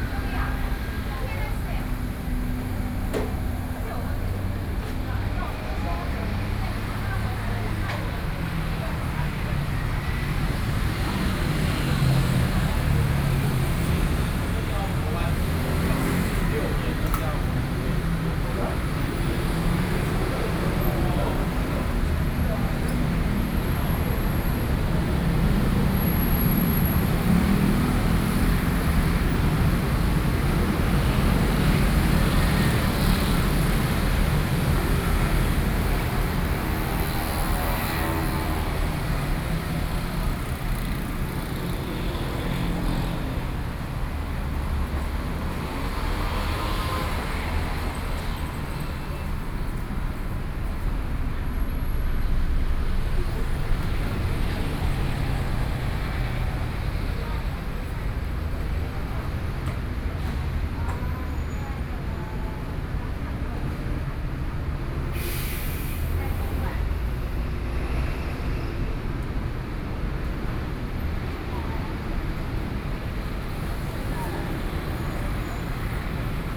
Taipei - Traffic noise
Traffic noise, Sony PCM D50 + Soundman OKM II